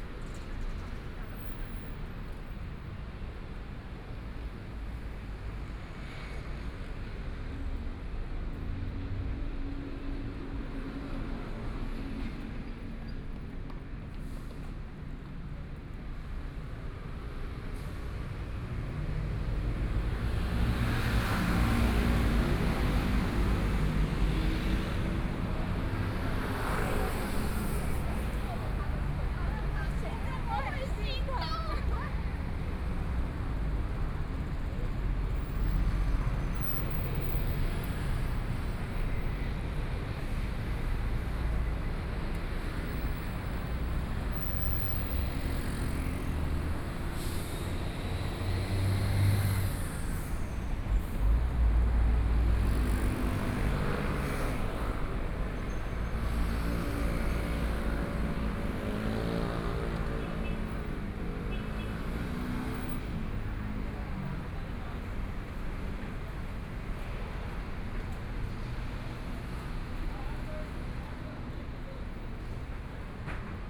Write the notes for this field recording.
Walking on the road （ZhongShan N.Rd.）from Nong'an St. to Jinzhou St., Traffic Sound, Binaural recordings, Zoom H4n + Soundman OKM II